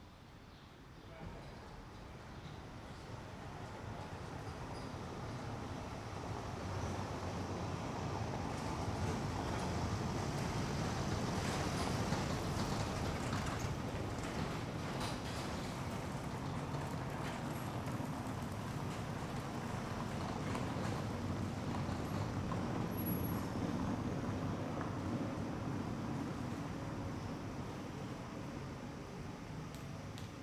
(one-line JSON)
{"title": "Pl. de la Minoterie, Molenbeek-Saint-Jean, Belgique - Rec du matin", "date": "2022-06-30 08:20:00", "description": "Between traffic noise and small birds. A soundscape. Record with : Zoom H8.", "latitude": "50.86", "longitude": "4.34", "altitude": "18", "timezone": "Europe/Brussels"}